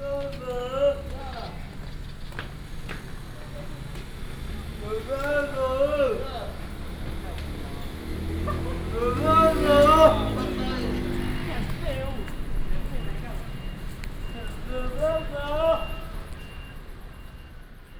Miaoli Station, 苗栗縣苗栗市 - To export direction
Walk at the station, Footsteps, from the station platform to export direction